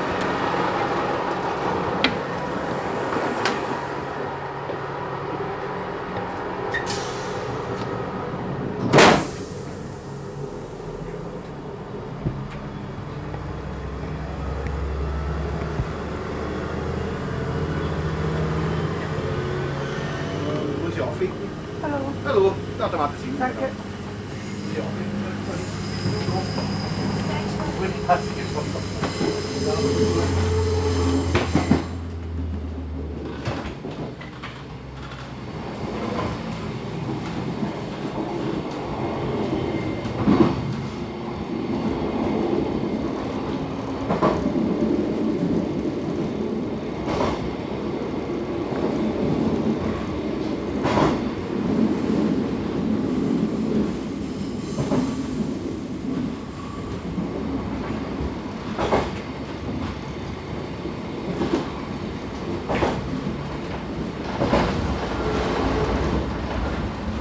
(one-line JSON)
{"title": "Wels Messe, Wels, Österreich - Almtalbahn", "date": "2021-08-03 13:28:00", "description": "Train ride Almtalbahn from station traun to station wels messe", "latitude": "48.15", "longitude": "14.02", "altitude": "314", "timezone": "Europe/Vienna"}